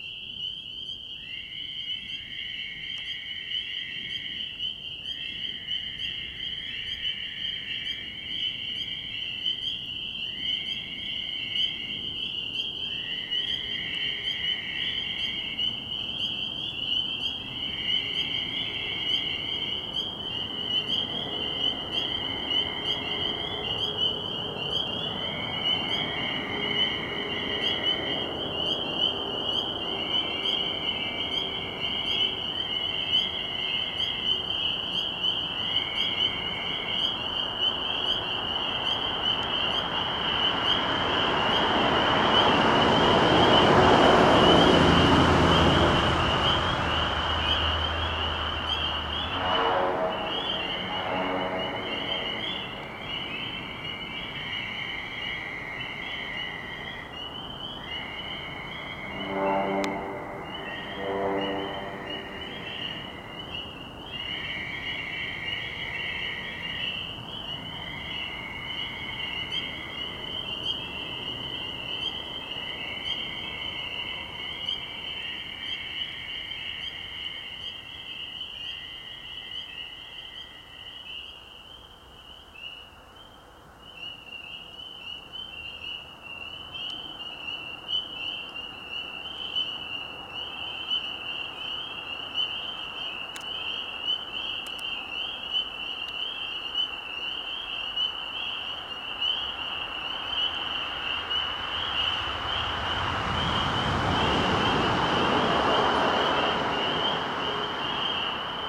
Downe, NJ, USA - toad road
roadside recording featuring spring peepers and Fowler's toads